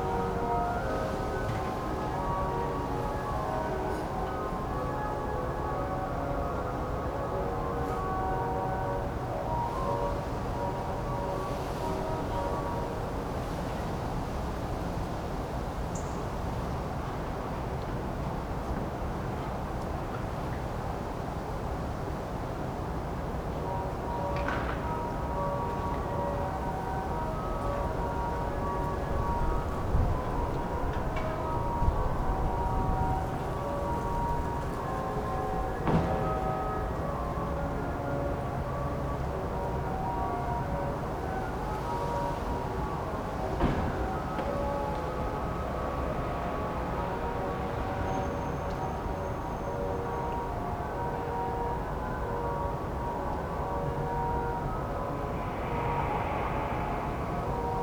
Köln, Maastrichter Str., backyard balcony - street organ, morning ambience
a street organ is playing somewhere around, then slowly disappearing, heard in the backyard
(Sony PCM D50)